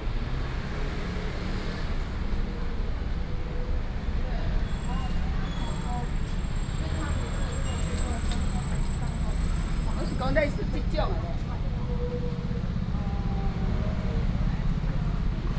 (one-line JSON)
{"title": "東協第一廣場, Taichung City - the mall", "date": "2016-09-06 16:35:00", "description": "the mall, Walking in different shops, Traffic Sound", "latitude": "24.14", "longitude": "120.68", "altitude": "93", "timezone": "Asia/Taipei"}